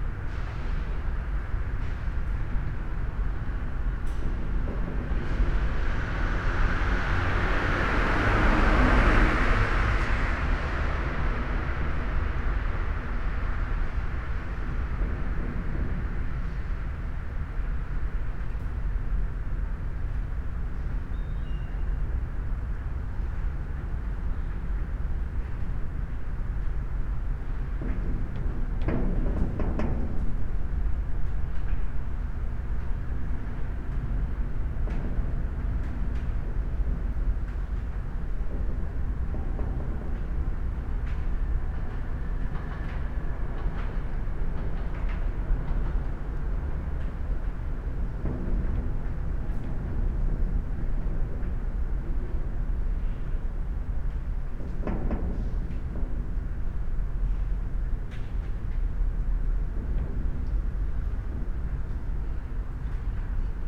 wind touching metal doors ... on the ground floor of abandoned house number 25 in old harbor of Trieste, seagulls and train from afar
Trieste, Italy, 11 September 2013